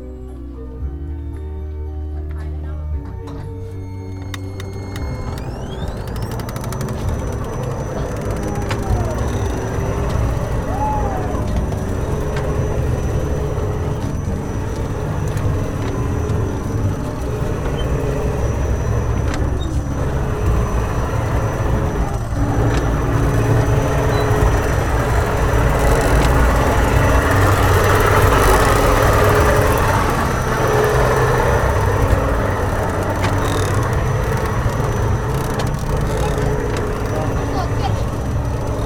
Bernardine Garden, B. Radvilaitės g., Vilnius, Lithuania - Carousel ride
A short carousel ride. People with children getting ready, taking their seats, with soft music playing in the background, and one not so happy boy complaining to his dad. Then, as carousel starts moving, loud mechanical noises drown almost everything out.
Vilniaus apskritis, Lietuva, 9 May 2021